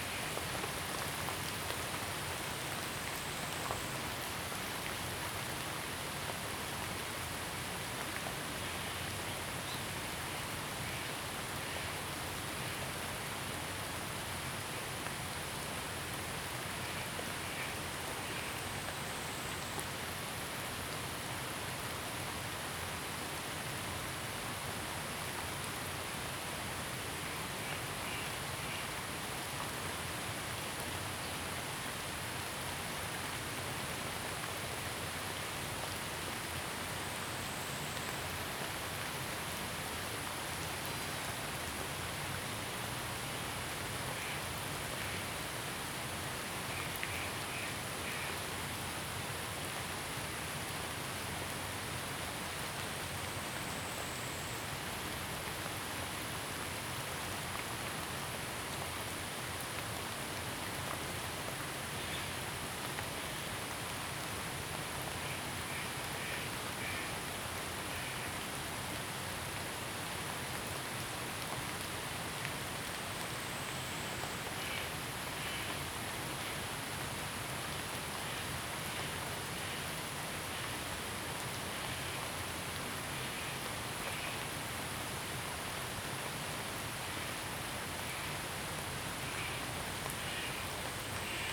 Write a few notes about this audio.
Bird calls, Rainy Day, Zoom H2n MS+XY